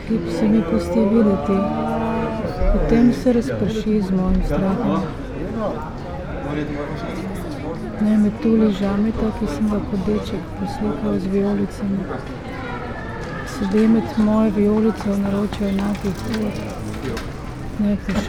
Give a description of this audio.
wednesday afternoon, sitting outside, drinking espresso, reading poem Pošast ali Metulj? (Mostru o pavea?) by Pier Paolo Pasolini